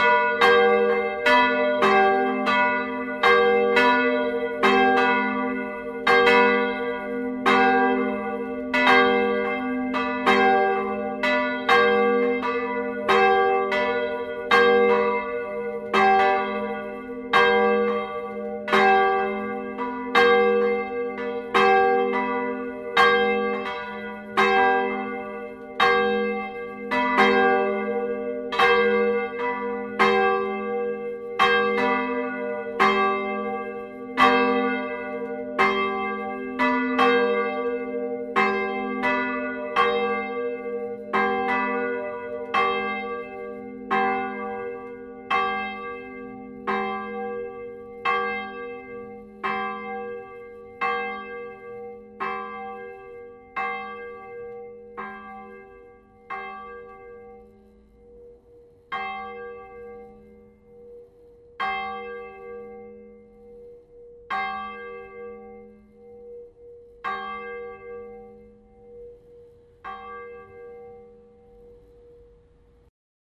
Rixensart, Belgique - Genval bells
Manual ringing of the two bells of the Genval church. These bells are poor quality and one is cracked.
Rixensart, Belgium, 14 August 2010